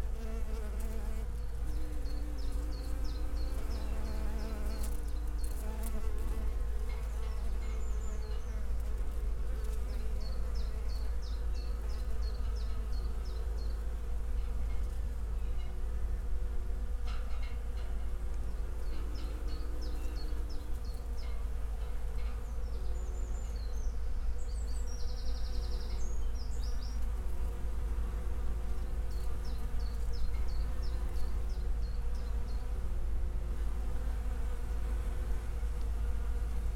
Field off Barric Lane, Eye, Suffolk, UK - crab apple tree in blossom with bees
a crab apple tree an isolated remnant of what once must have been a rich, diverse hedgerow, pruned hard into an odd L-shape against the chain link fence of the Research Station. This warm sunny day in April it is densely covered in thick pale pink blossom and swarming with bees of every shape and size; a stark and curious contrast with the silent monocrop that it sits adjacent to. Wren and Chiffchaff. Rusty, abandoned sugarbeet harvester shaken by the wind. The all pervasive background hum of the Research Station.
England, United Kingdom, 21 April 2022